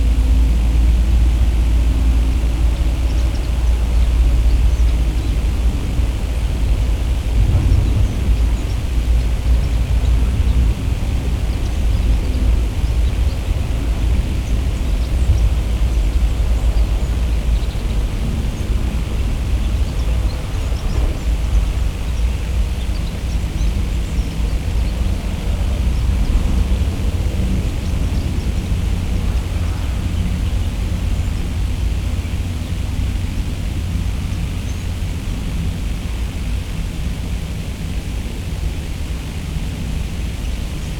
Niévroz, High Voltage Pylons
and a few flies around.
Niévroz, France